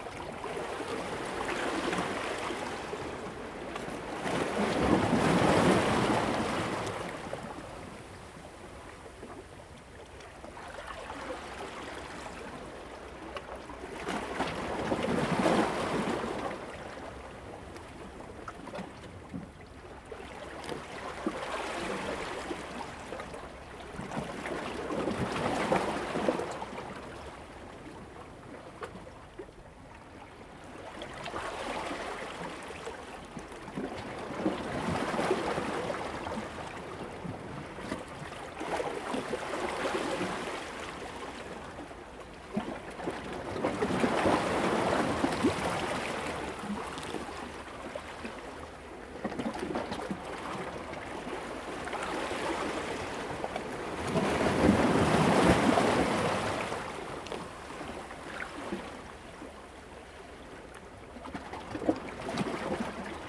南濱公園, Hualien City - Rocks and waves
sound of the waves
Zoom H2n MS+XY
29 August, ~06:00